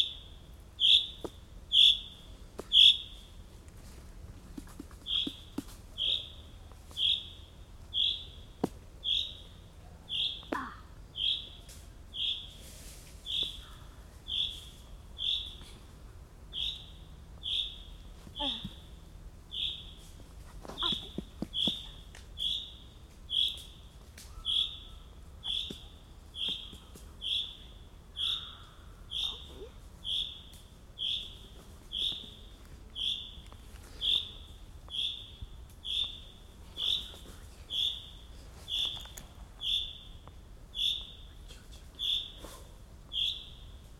Krzywe, Krzywe, Poland - Bird ?
Bird calling. Recorded on Tascam DR 100 + micbooster Clippy XLR EM172